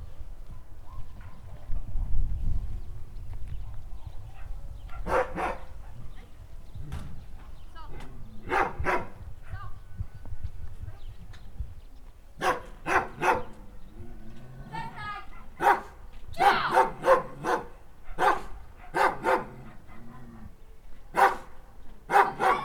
as soon as we showed up with the recorders, two kids ran out of the house, chasing their hens for fear we would steal or hurt the animals. the zoom recorders look quite scary, a bit like electroshock guns. both kids and the dog got really nervous.